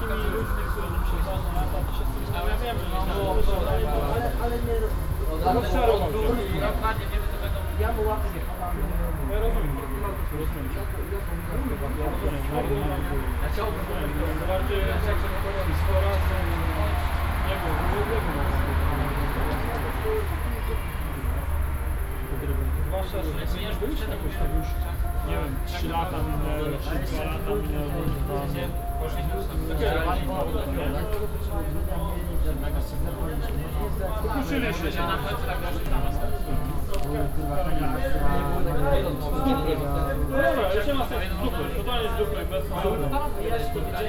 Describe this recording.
standing next to a tall apartment building. a party taking place in one of the apartments. young people talking on the balcony. (sony d50)